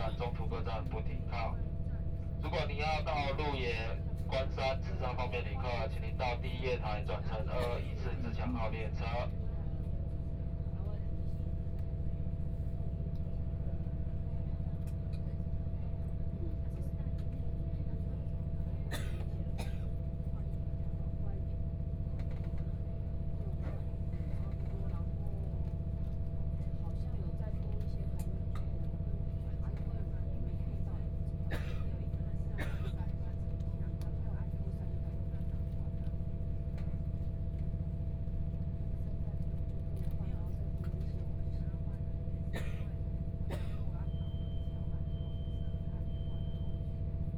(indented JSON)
{
  "title": "Taitung Station, Taitung City - Interior of the train",
  "date": "2014-01-18 11:04:00",
  "description": "Train message broadcasting, Interior of the train, Binaural recordings, Zoom H4n+ Soundman OKM II",
  "latitude": "22.79",
  "longitude": "121.12",
  "timezone": "Asia/Taipei"
}